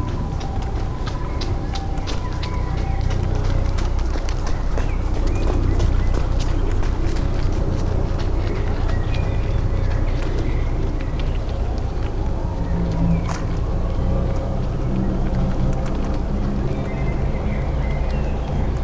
Poznan, at Rusalka lake - air pockets
lots of air pockets bursting on the surface of the lake.
Poznań, Poland, 27 June 2015